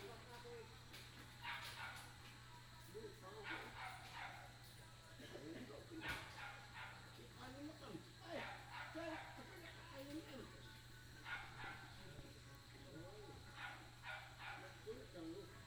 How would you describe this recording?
At the corner of the junction, Insect noise, traffic sound, Frog croak, Beside the Aboriginal restaurant, Dog barking, Binaural recordings, Sony PCM D100+ Soundman OKM II